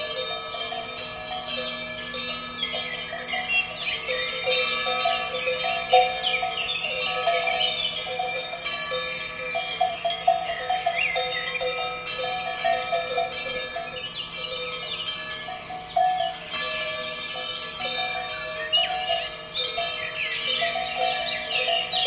Düdingen, Switzerland - Cow Bells Schiffenensee Lake
Recorded with a pair of DPA 4060s and a Marantz PMD661
16 May